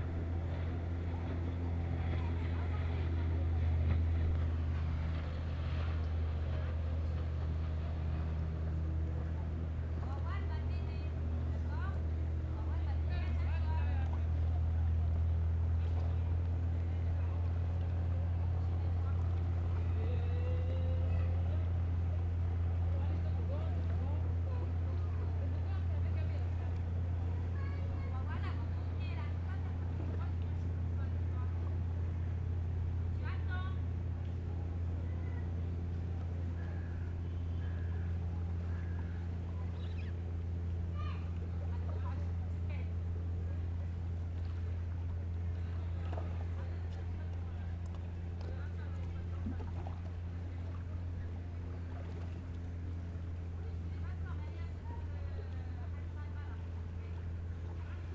Lausanne Ouchy, Hafen am Genfersee
Schifffe am Genfersee vor der Winterpause
Lausanne, Switzerland, 2 October, ~19:00